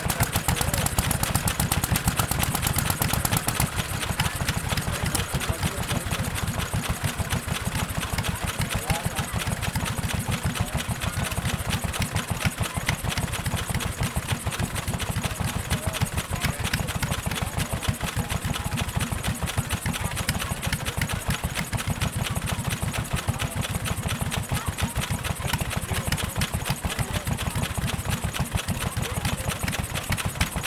Bodalla NSW, Australia - Bodalla NSW, machine sounds at fair
Vintage portable steam and petrol engines on display at school fair.
- pumps shift water around tanks, flywheels spin, belts slap and flail
- owners wander about the machines: starting, stopping & adjusting
- near chatter of crowd & phasing sounds from buskers in distance